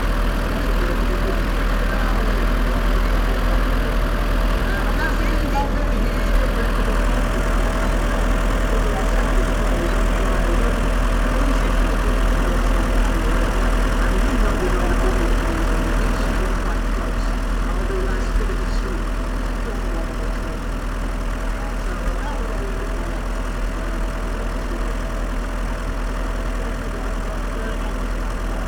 Red Way, York, UK - Farndale Show ... vintage tractor display ...
Farndale Show ... vintage tractor display ... lavalier mics clipped to baseball cap ... all sorts of everything ...